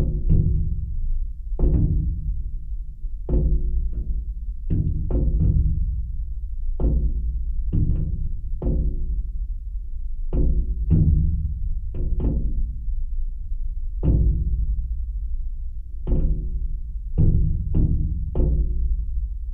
Alausai, Lithuania, heavy rain drops
Geophone on a metalic fragment of window in abandoned building. Rain drops falling...